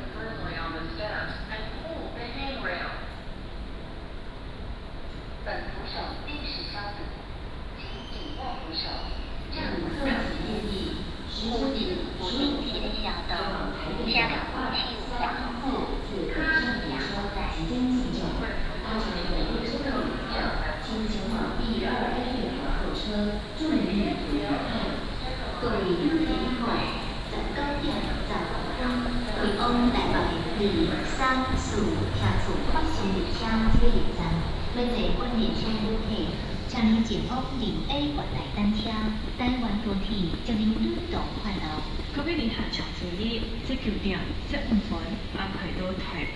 台灣高鐵台中站, Taiwan - Walking through the station
Walking through the station, From the station hall to platform
Taichung City, Taiwan, 30 April 2015